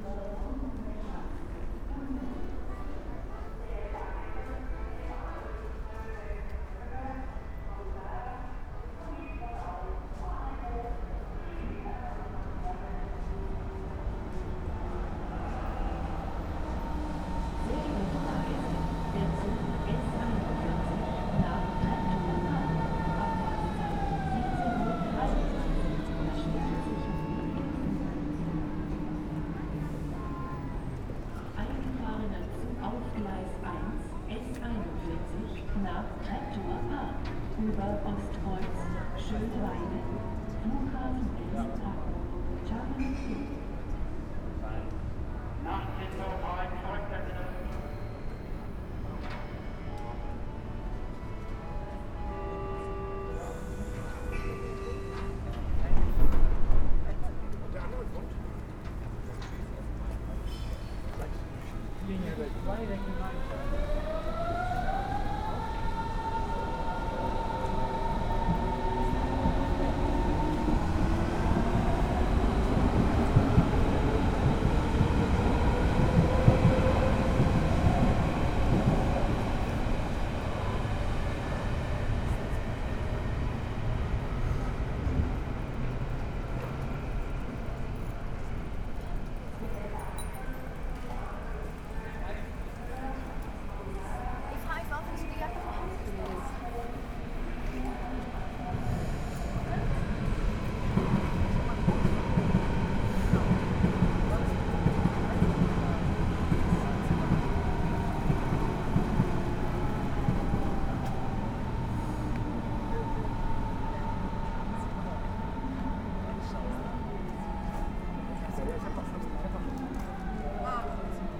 Greifenhagener Brücke, Greifenhagener Str., Berlin, Deutschland - masking game
masking_game - late saturday afternoon next to the stairs down to the s-bahn tracks on the small pedestrian bridge over the s-bahn: in time with the timetables, the incoming and outgoing trains mask the accordion player not far away and the generally gentle atmosphere....and the announcement at the beginning marks our special time..